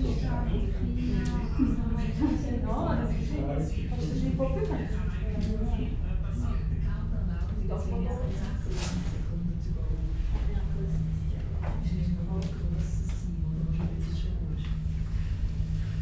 In der Seilbahn nach der Lauchernalp
In der Seilbahn, so modern dass nichts mehr tönt, wir sind begleitet und fahren hoch auf die Alp. die Gespräche sind nicht über das Sehen und die fernen und doch so nahen Berge im Wallis, alles 3000er
Wiler (Lötschen), Schweiz, 8 July 2011